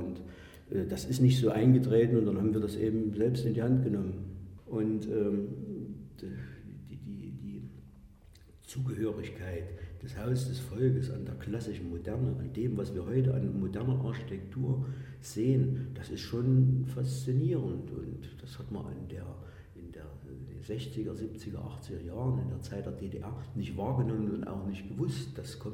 probstzella - haus des volkes
Produktion: Deutschlandradio Kultur/Norddeutscher Rundfunk 2009
Probstzella, Germany